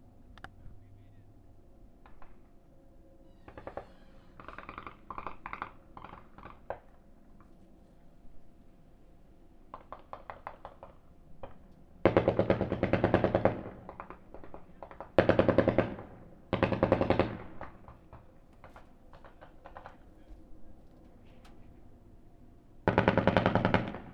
neoscenes: heavy machine gun fire
Tooele County, Utah, United States of America, 29 April 2010